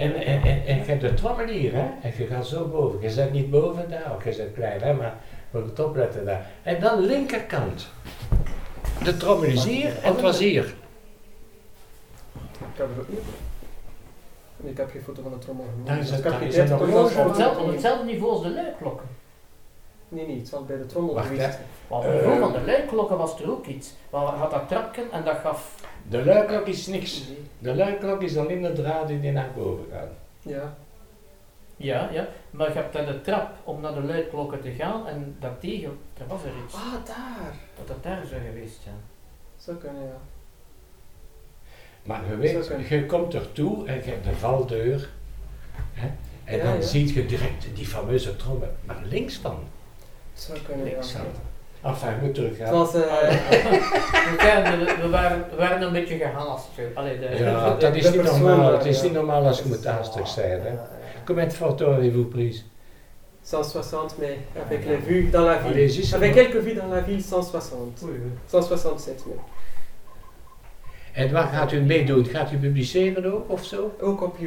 Louvain, Belgique - Jacques Sergeys
Talking about the Leuven bells in the house of Jacques Sergeys, a former bellfounder. In this place, people speak dutch, but Jacques is perfectly bilingual.
Leuven, Belgium, 8 March 2014